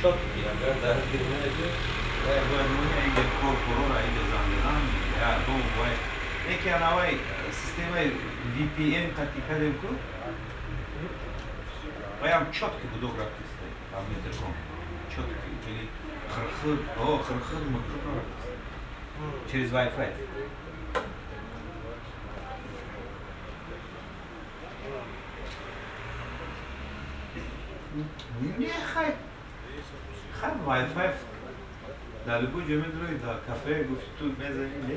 berlin, walterhöferstraße: zentralklinik emil von behring, raucherbereich - the city, the country & me: emil von behring hospital, smoking area
phoning man
the city, the country & me: september 6, 2012